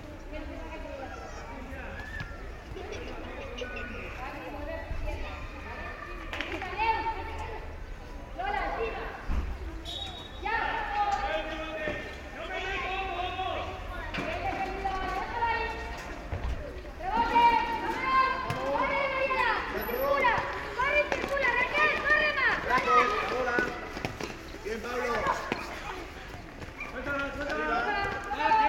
Colonia Juan XXIII, Alicante, Spain - (06 BI) School Playground
Binaural recording of a school playground at Colonia San Juan XXIII.
Recorded with Soundman OKM on Zoom H2n.